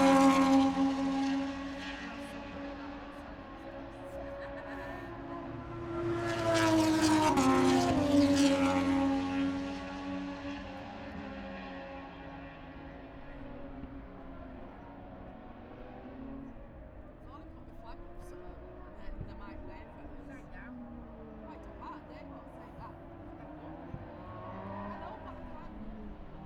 british motorcycle grand prix 2022 ... moto two free practice one ... wellington straight opposite practice start ... dpa 4060s clipped to bag to zoom h5 ...